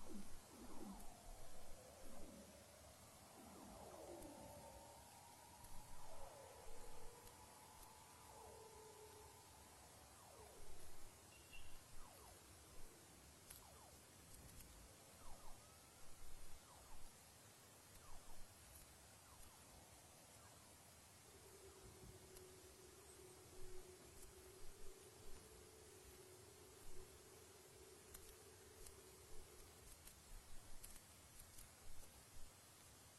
PALAOA (PerenniAL Acoustic Observatory) - Antarctic underwater soundscape - Livestream recording from PALAOA ::: 20.11.2007 19:55:25